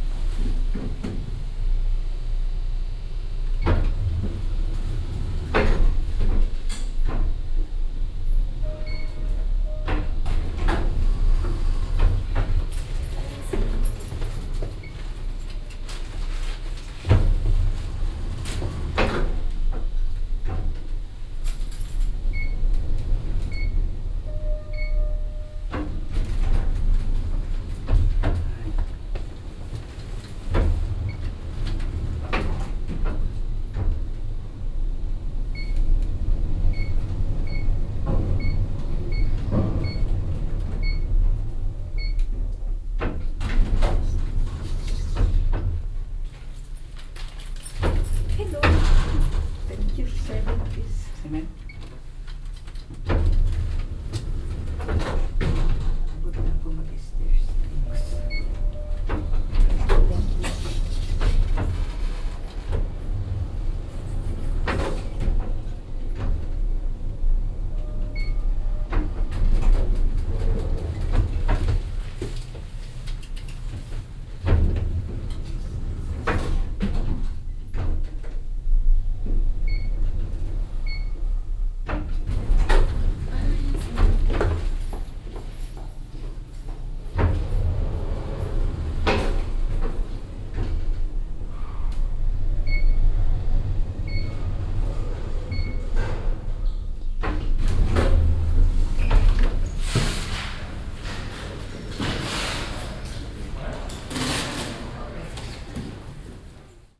vancouver, burrard street, hotel elevator
big hotel, pretending to be fancy, hotel elevator, servants and janitors entering and exiting on different floors
soundmap international
social ambiences/ listen to the people - in & outdoor nearfield recordings